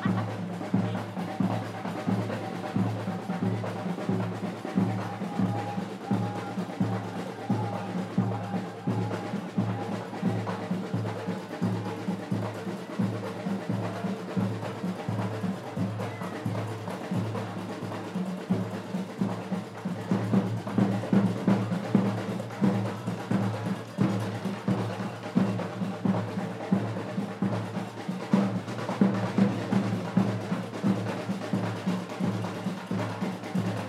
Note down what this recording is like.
Traditional instruments (qraqeb, drums, trumpets), voices. Tech Note : Sony PCM-D100 internal microphones, wide position.